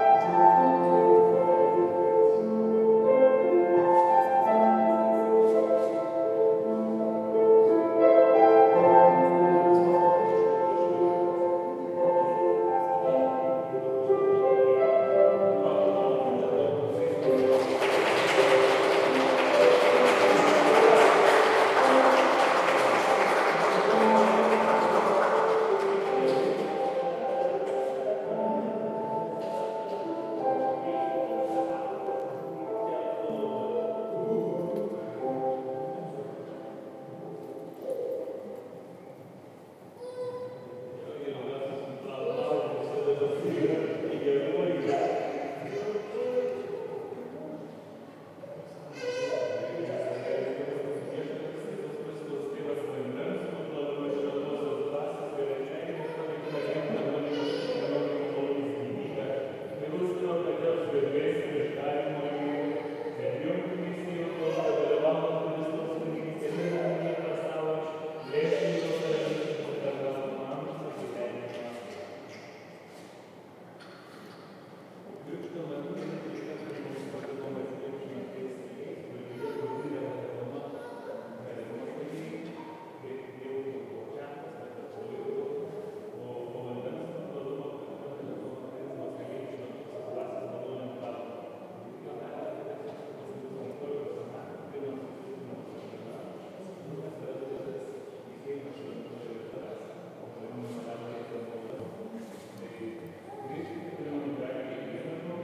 Recordist: Tamar Elene Tsertsvadze
Description: On a sunny day inside the church. Ceremony of Christening. Recorded with ZOOM H2N Handy Recorder.